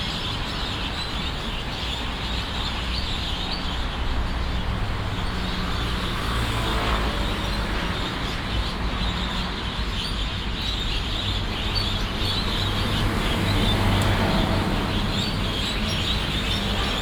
潭子加工區, Taichung City - birds and traffic sound
Cluster a large number of birds, Traffic sound, Binaural recordings, Sony PCM D100+ Soundman OKM II